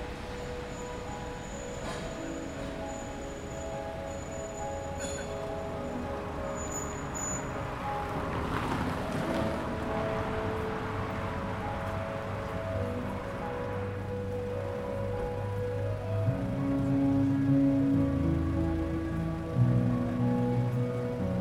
Piazza della Scala, Milano, Italia - solstizio d'estate - festa della musica
il tram, le macchine, le note del pianoforte di Marius Jonathan, le rondini, le persone, i bambini.... la notte del solstizio d'estate
Milano, Italy, 21 June